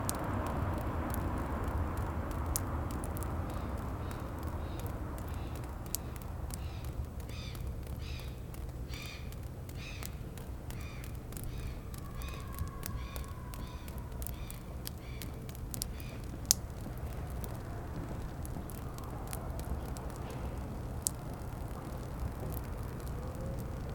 Brussel, België - raindrops
This is the sounds of raindrops falling out of a rainpipe.
België - Belgique - Belgien, European Union, 25 March 2013